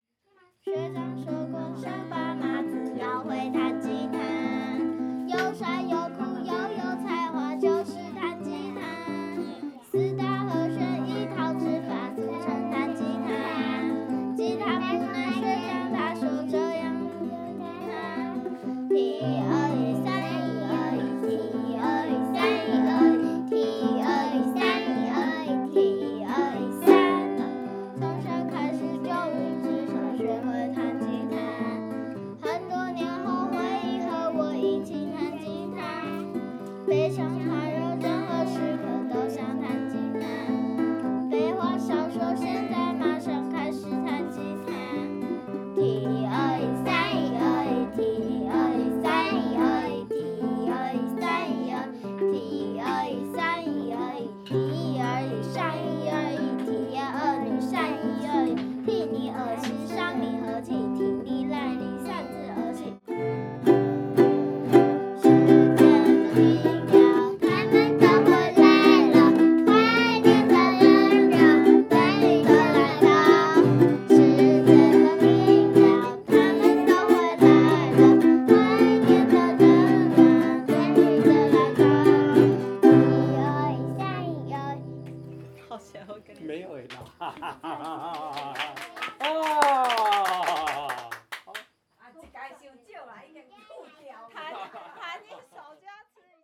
Coffee Maternal grandmother, Puli, Taiwan - Reunion
Family reunion，Playing guitar together。
Zoon H2n MX+XY (2015/9/27 010), CHEN, SHENG-WEN, 陳聖文
Nantou County, Taiwan, 27 September